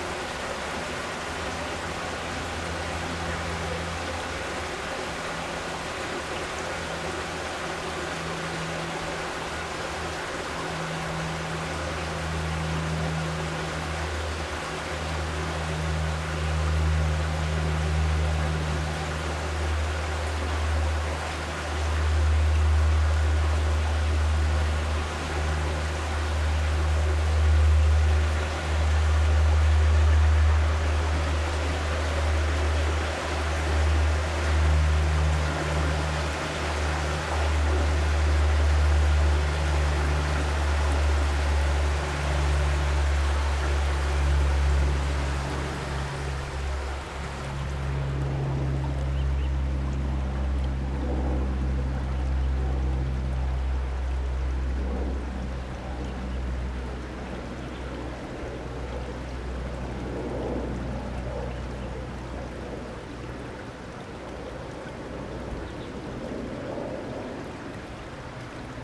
永豐圳, Xindian Dist., New Taipei City - Irrigation waterway
Stream and Birds, Irrigation waterway, Aircraft flying through
Zoom H4n + Rode NT4